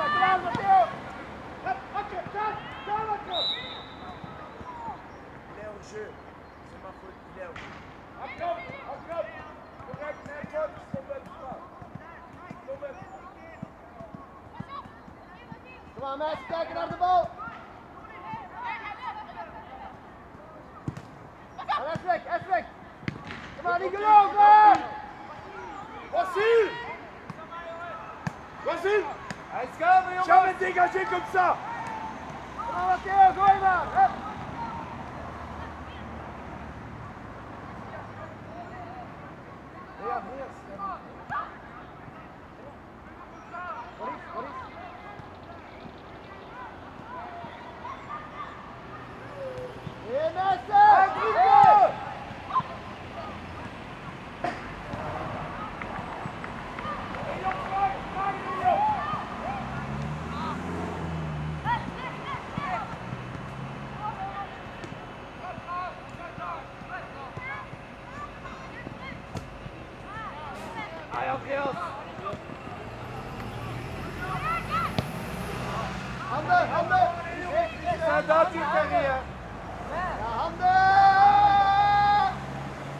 {"title": "Stade du Heymbos, Jette, Belgique - Football match ambience", "date": "2022-01-22 10:35:00", "description": "Coaches shouting instructions at the young players, busses and cars passing by on the road, distant birds.\nTech Note : Sony PCM-D100 internal microphones, wide position.", "latitude": "50.89", "longitude": "4.33", "altitude": "49", "timezone": "Europe/Brussels"}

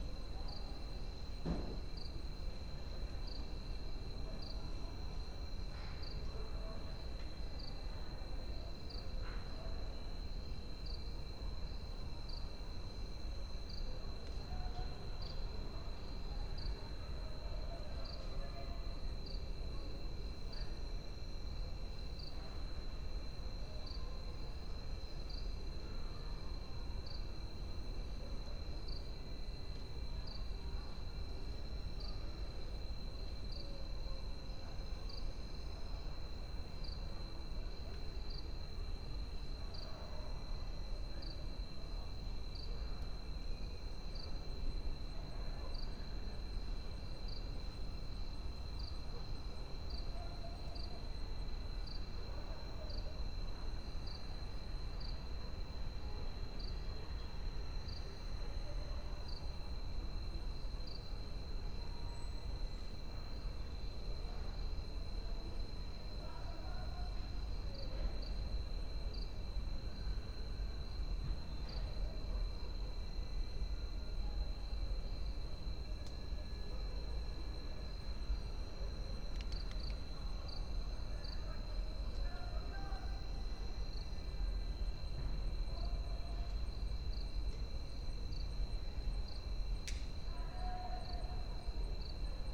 {"date": "2022-02-25 20:19:00", "description": "20:19 Film and Television Institute, Pune, India - back garden ambience\noperating artist: Sukanta Majumdar", "latitude": "18.51", "longitude": "73.83", "altitude": "596", "timezone": "Asia/Kolkata"}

